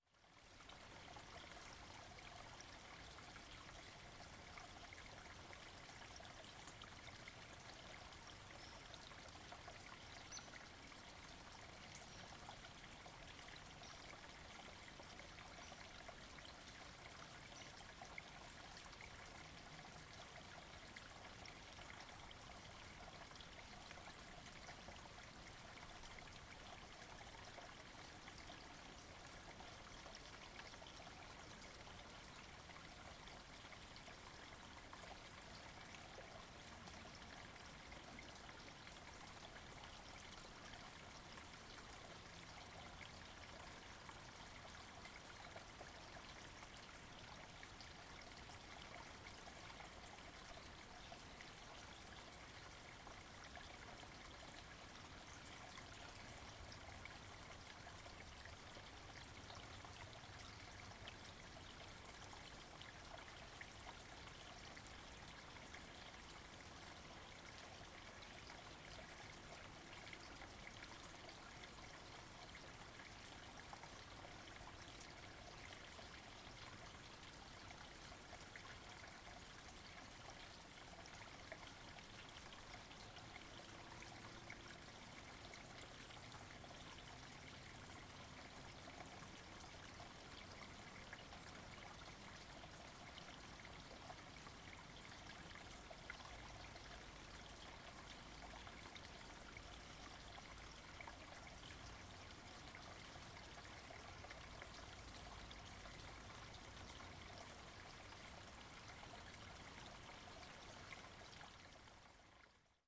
Lone Wolf Trail, Ballwin, Missouri, USA - Lone Wolf Stream
Stream cascading down hill across Lone Wolf Trail in Castlewood State Park.
Missouri, United States, 13 April 2021, 19:35